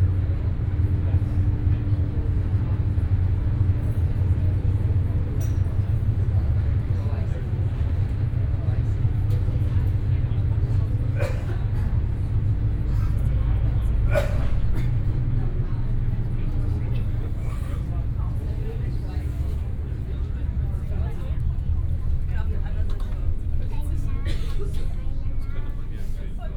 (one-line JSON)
{"title": "Berlin Wannsee - ferry boat departing, ambience", "date": "2014-12-06 14:00:00", "description": "Berlin Wannsee, public transport ferry boat ambience, ferry departing\n(Sony PCM D50, OKM2)", "latitude": "52.42", "longitude": "13.18", "altitude": "27", "timezone": "Europe/Berlin"}